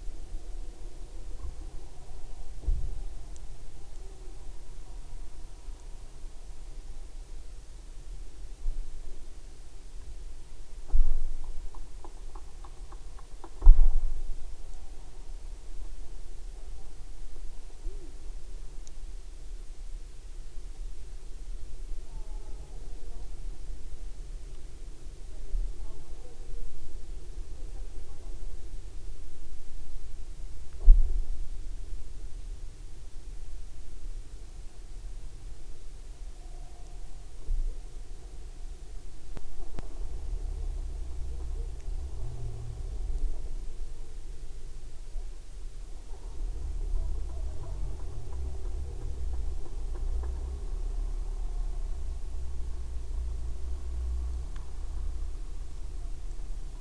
Turmantas, Lithuania, WWI fortification
WWI German fortification. Almost destroyed by time and people. I placed small microphones in dome ventilation hole...just atmosphere from inside.